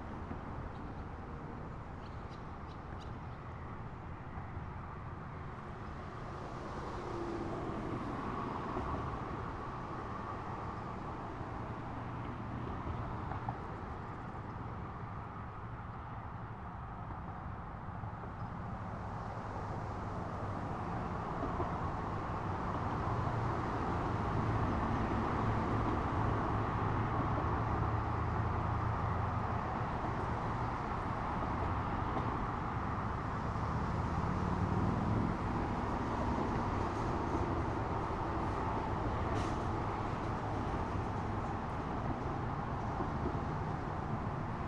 Sandbank on Rio Grande at Central Avenue. Recorded on Tascam DR-100MKII; Fade in/out 10 seconds Audacity, all other sound unedited.